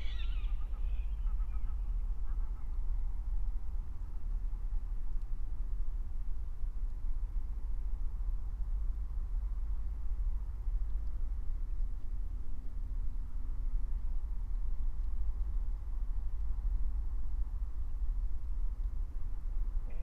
19:20 Walberswick, Halesworth, Suffolk Coastal Area - wetland ambience